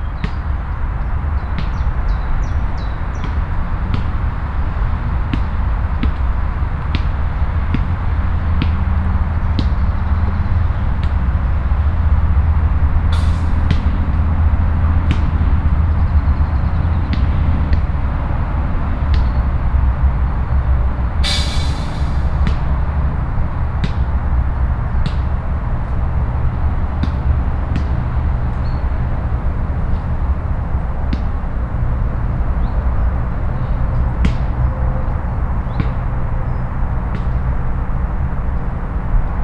Diegem, near the Woluwelaan, a young man playing basket-ball